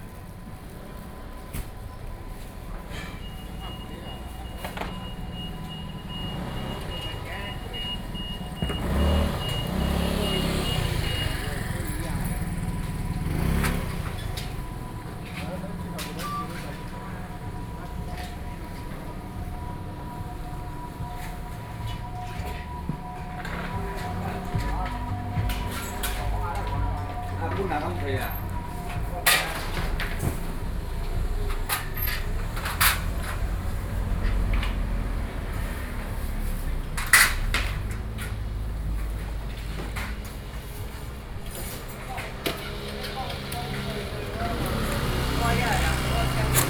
Hulin St., Xinyi Dist. - Traditional markets
7 November 2012, 06:46